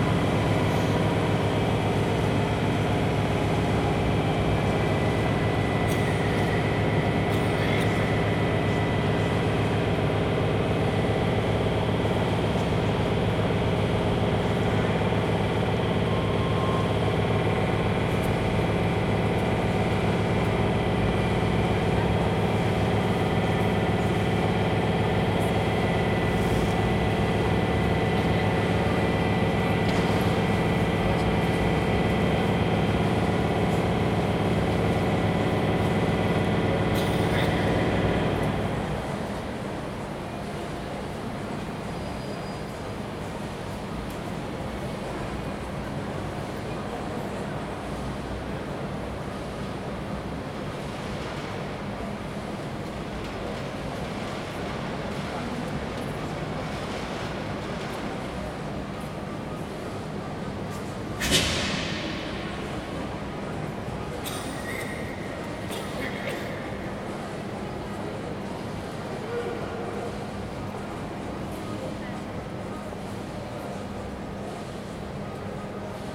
A soundwalk inside the Keleti Railway Station highlighting the extraordinary architectural acoustics of this massive structure. This recordings were originally taken while waiting for the Budapest --> Belgrade night connection. Recorded using Zoom H2n field recorder using the Mid-Side microhone formation.